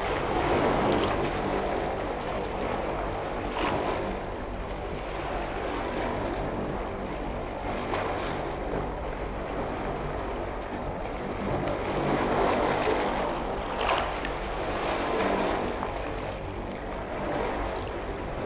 sea waves heard from metal tube of a traffic sign
7 September 2013, 18:57